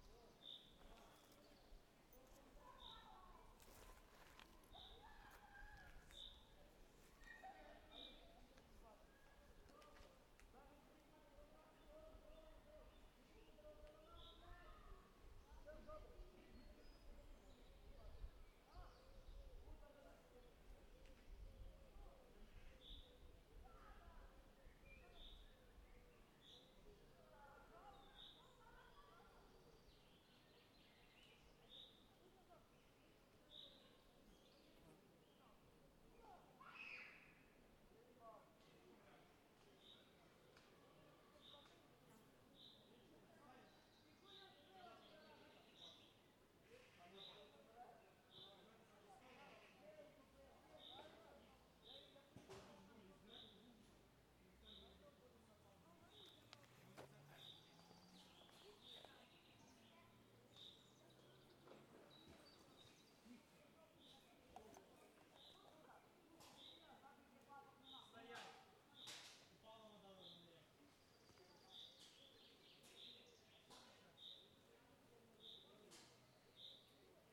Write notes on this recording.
Ukraine / Vinnytsia / project Alley 12,7 / sound #17 / stone, trail and cyclists